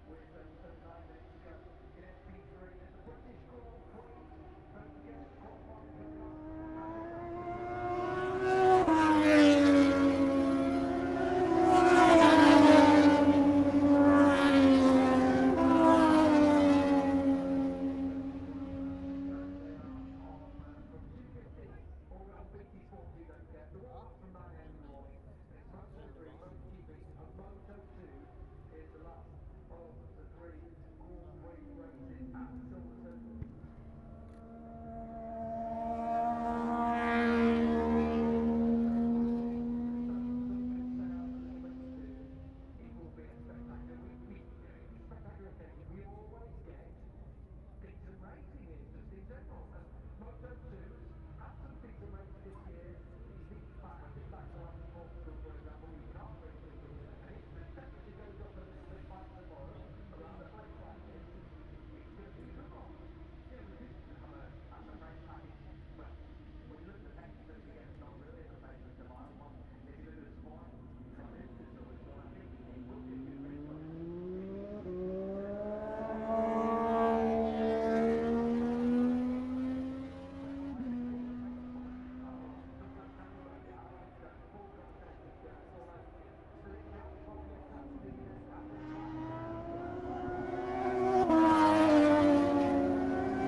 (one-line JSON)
{"title": "Silverstone Circuit, Towcester, UK - british motorcycle grand prix 2021 ... moto two ...", "date": "2021-08-28 10:55:00", "description": "moto two free practice three ... copse corner ... olympus ls 14 integral mics ...", "latitude": "52.08", "longitude": "-1.01", "altitude": "158", "timezone": "Europe/London"}